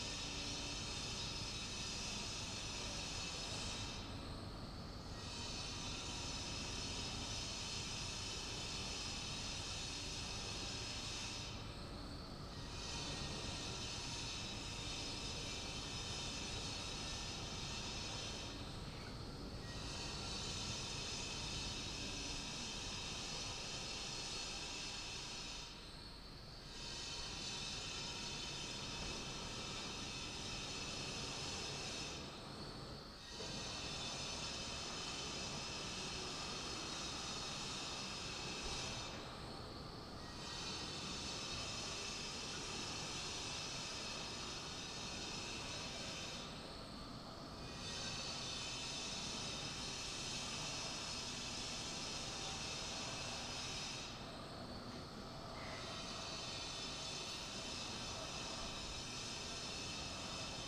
A short recording with a microphone i made.
Ústecký kraj, Severozápad, Česko, 26 November